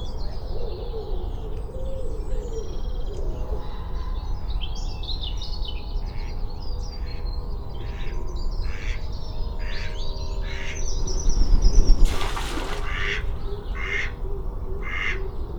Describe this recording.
The Mallard are visiting not nesting here this year. Humans pass by in cars and motorbikes and planes. An Airedale two houses away barks and the Mallard argue as usual.